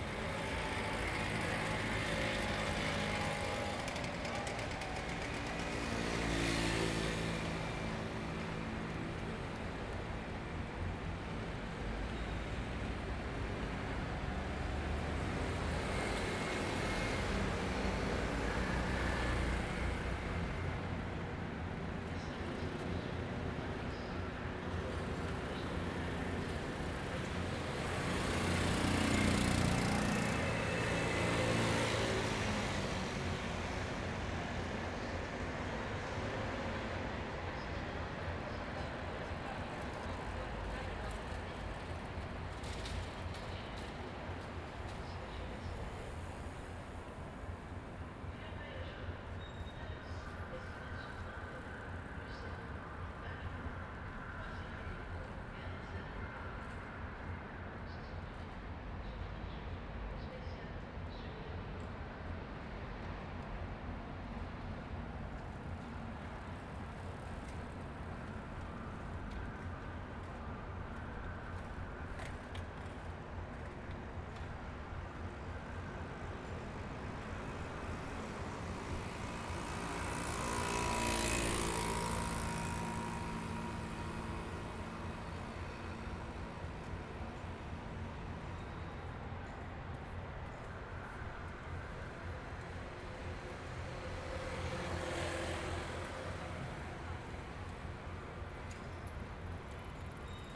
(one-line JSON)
{"title": "De Ruijterkade, Amsterdam, Nederland - Wasted Sound Central Station", "date": "2019-12-04 13:05:00", "description": "Wasted Time\n‘‘Wasted time also changes the concept of wasted as a negative thing. In a creative process it is wasting time that clears the mind or sharpens the mind so creation is possible. ....... But for any kind of occupation it is necessary to alternate working or using time with not working or un-using time.’’", "latitude": "52.38", "longitude": "4.90", "altitude": "1", "timezone": "Europe/Amsterdam"}